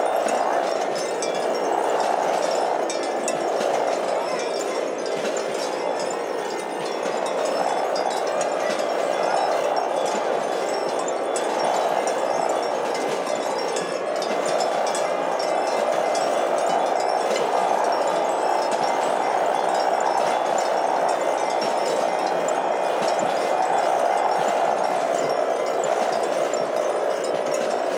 Harmonic Fields. Final
Standing in the centre of the final movement of the installation.
Lakes Alive brought French artist and composer, Pierre Sauvageot (Lieux Publics, France) to create an interactive musical soundscape on Birkrigg Common, near Ulverston, Cumbria from 3-5 June 2011.
500 Aeolian instruments (after the Greek god, Aeolus, keeper of the wind) were installed for 3 days upon the Common. The instruments were played and powered only by the wind, creating an enchanting musical soundscape which could be experienced as you rested or moved amongst the instruments.
The installation used a mixture of traditional and purpose built wind instruments. For example metal and wood wind cellos, long strings, flutes, Balinese paddyfield scarecrows, sirens, gongs, drums, bells, harps and bamboo organs. They were organised into six movements, each named after a different wind from around the world.